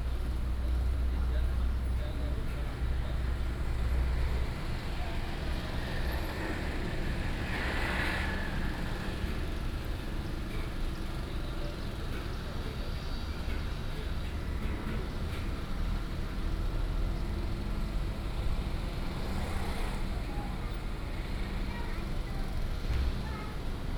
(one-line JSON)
{"title": "頭城鎮史館, Toucheng Township - Small towns", "date": "2014-07-07 10:26:00", "description": "Very hot weather, Traffic Sound", "latitude": "24.86", "longitude": "121.82", "altitude": "10", "timezone": "Asia/Taipei"}